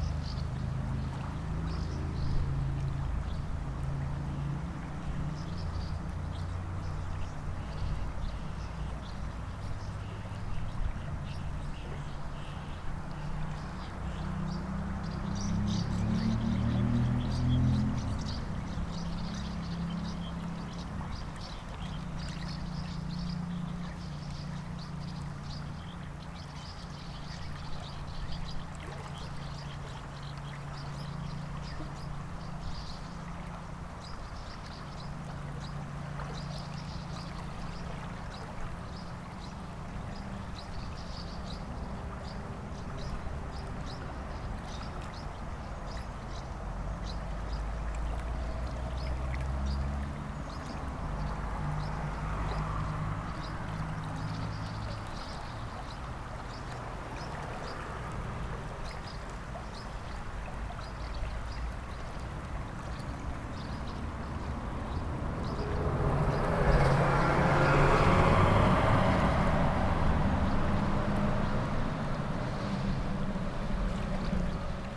Pont du Lit du Roi, via rhôna, Massignieu-de-Rives, France - hirondelles
Via Rhôna sous le pont du Lit du Roi. Quelques bruissements des eaux du Rhône et les hirondelles en chasse.
21 July 2021, 17:10